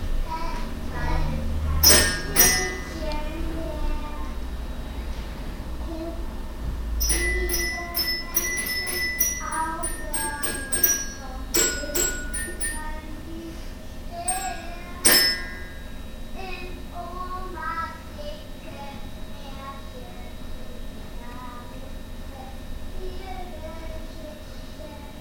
cologne, ubierring, kindergarden - cologne, ubierring, inside kindergarden
soundmap nrw: social ambiences/ listen to the people in & outdoor topographic field recordings